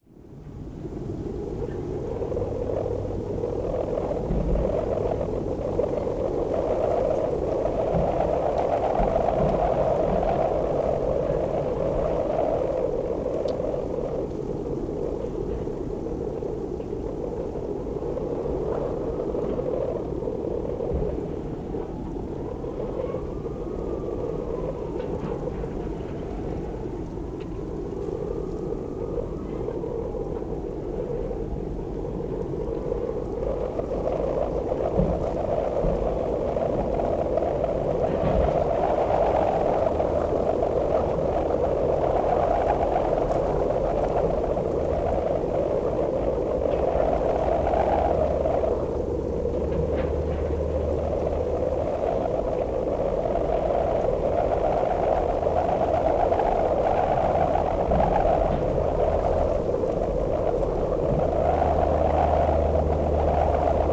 Whipping wind harps Kaunas, Lithuania
Recorded during the 'Environmental Sound Installation' workshop in Kaunas